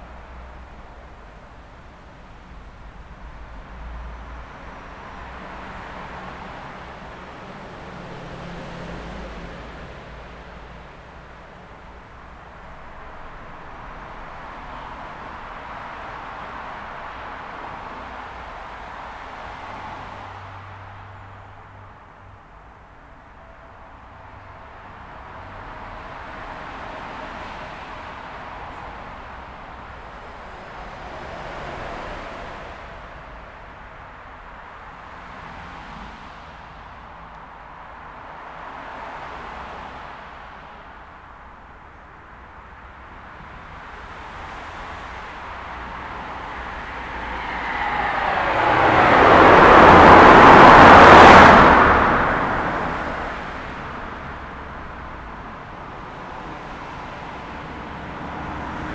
This recording was made using a Zoom H4N. The recorder was positioned under the A38 so echoing traffic can be heard as well as the traffic above. This is one of the crossing points of Devon Wildland- with this level of noise would wildlife use this underpass to cross?...This recording is part of a series of recordings that will be taken across the landscape, Devon Wildland, to highlight the soundscape that wildlife experience and highlight any potential soundscape barriers that may effect connectivity for wildlife.